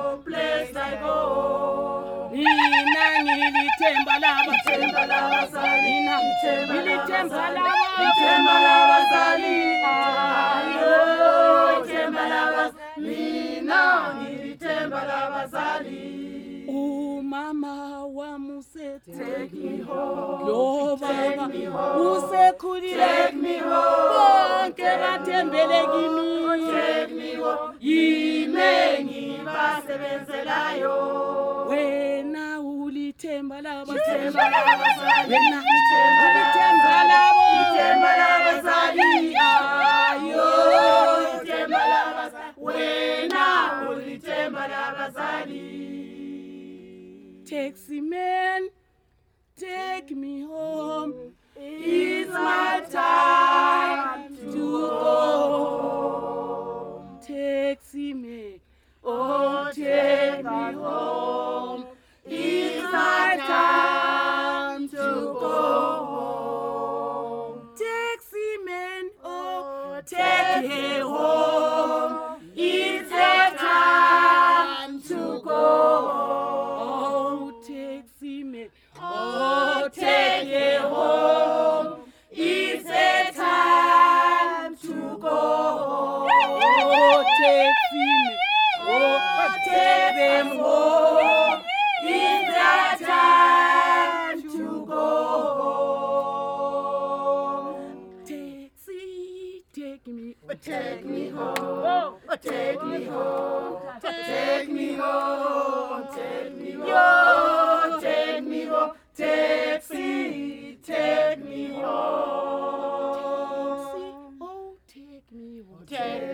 outside the Hall, Matshobana, Bulawayo, Zimbabwe - We are so happy to see you...
two good-bye songs : “we are so happy to see you…” and
“taxi man, take me home…!”
You can find the entire list of recordings from that day archived here: